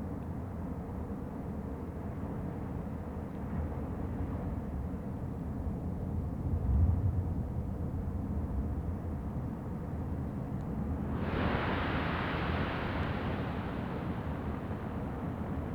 Gáldar, Gran Canarai, hydrophones in the sand
hydrophones burried in the sand of ocean's shore